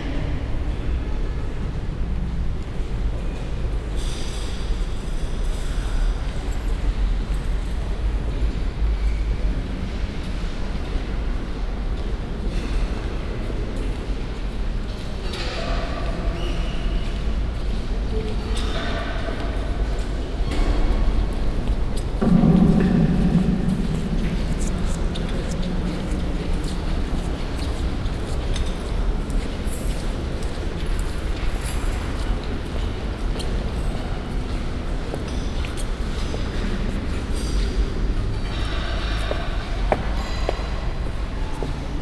Paris, Saint Ambroise Church, end of a Mass
End of a Mass, inside church. Footsteps leaving.